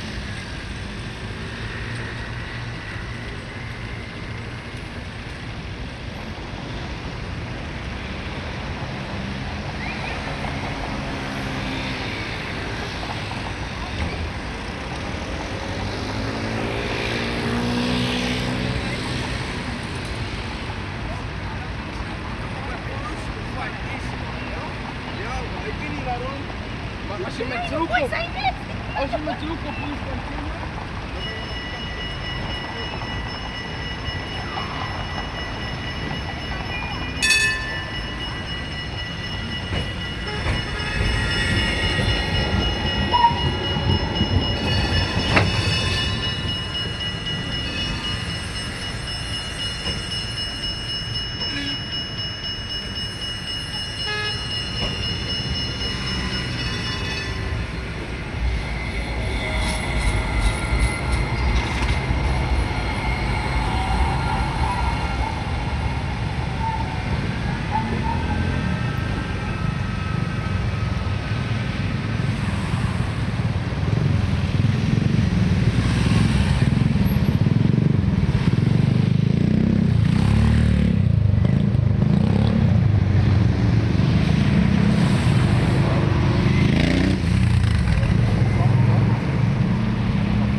Traffic jams, trams, cars, motorcycles, people. Very busy area in the city, especially on a Friday afternoon.